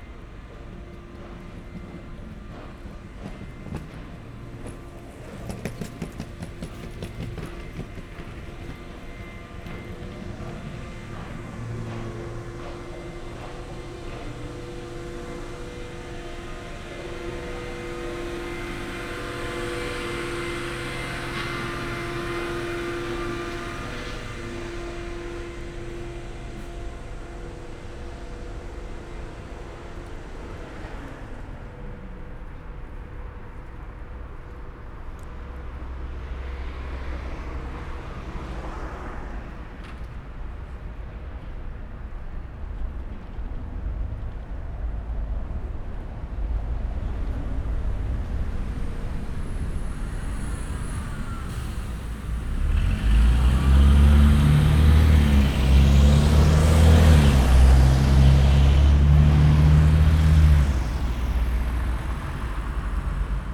Binckhorst, La Haya, Países Bajos - soundwalk along Melkwegstraat
recorded with zoom H4, and two DPA microphones